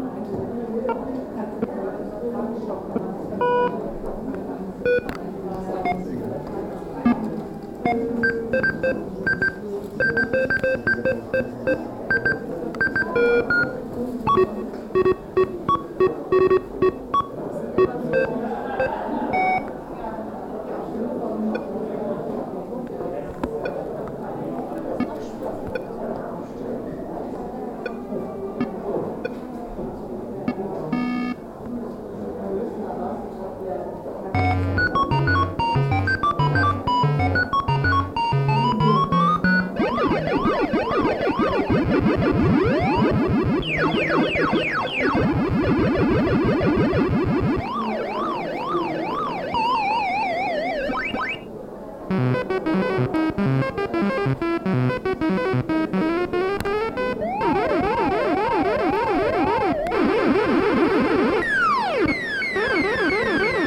hannover, museum august kestner, installation
recording of an installation of the exhibition Oggetto Sonori about sound design - here development of digital sound design in history
soundmap d - social ambiences and topographic field recordings
Hanover, Germany